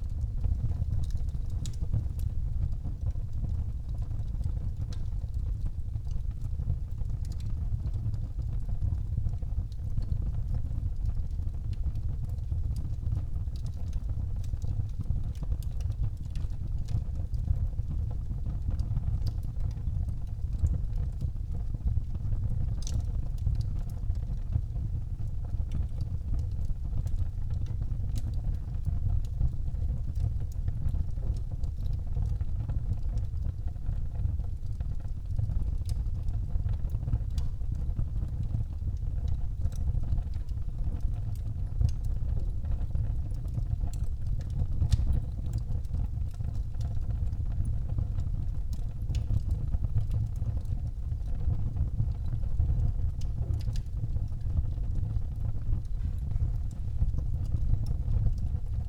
Netzow, Templin, Deutschland - iron furnace at work
an old iron furnace heating up the room
(Sony PCM D50, Primo EM172)